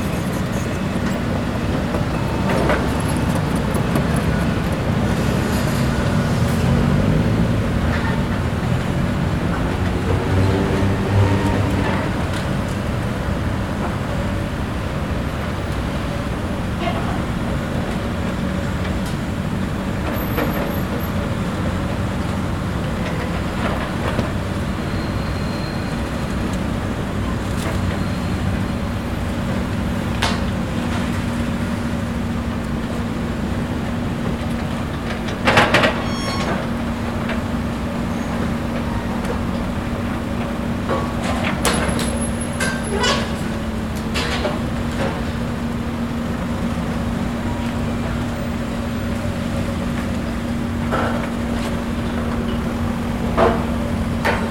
destruction of building, construction site, engine, destruction device, road traffic
captation : zoom h4n